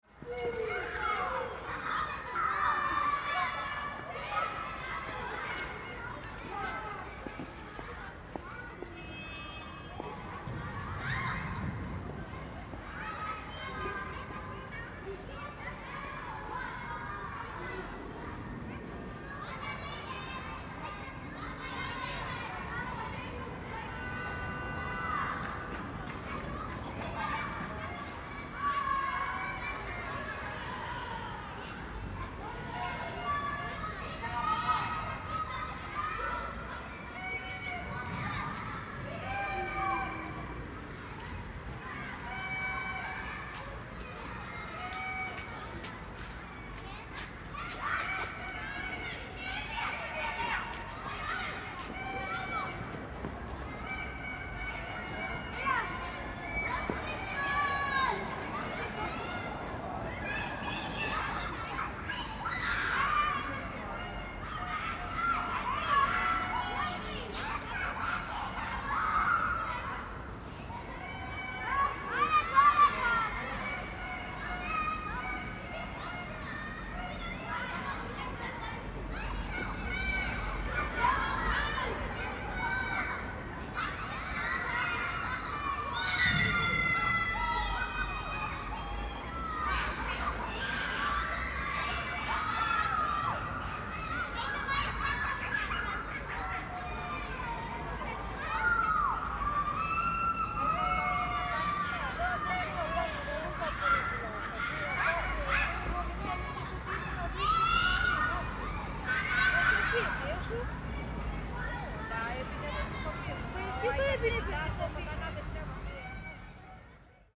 End of School Childrens Playground
One of Berlins most characteristic late afternoon sounds, here with distant roars from Tegel airport.
Berlin, Germany, 3 November 2011